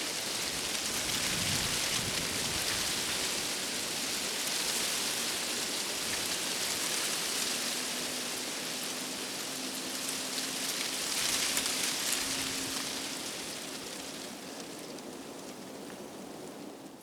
Lithuania, Utena, murmuring withered leaves
island in the frozen marsh. withered leaves on young oak tree
25 January